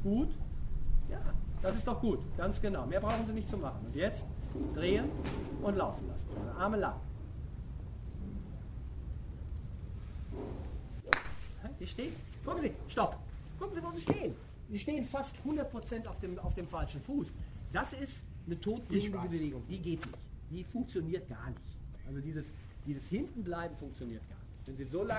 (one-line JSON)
{"title": "mettmann, golfclub, trainingsstunde", "date": "2008-04-19 10:50:00", "description": "anweisungen des trainers, abschläge, übungen - mittags im frühjahr 07\nproject: : resonanzen - neanderland - social ambiences/ listen to the people - in & outdoor nearfield recordings", "latitude": "51.28", "longitude": "7.00", "altitude": "183", "timezone": "Europe/Berlin"}